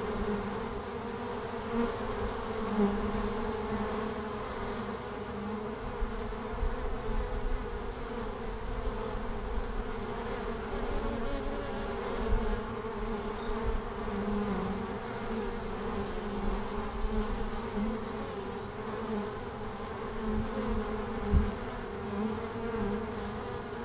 Langel, Köln, Deutschland - Bienen im März / Bees in march
20 ° C, in den Sonnenstundenfliegen fliegen die Bienen jetzt in großen Mengen aus. Sie finden sehr viel Pollen. Die erste große Menge Nektar werden die Bienen mit der Salweidenblüte finden.
Der Recorder lag direkt unter dem Einflugloch. Manche Bienen bleiben kurz im Windfell hängen.
20 ° C (68 F).
In the sun hours, the bees now fly in large quantities. They will find a lot of pollen. The first large quantity of nectar the bees will find in the flower of the goat willow.
The recorder was placed directly below the entrance hole. Some bees remain short hanging in the wind coat.
Cologne, Germany, 9 March 2014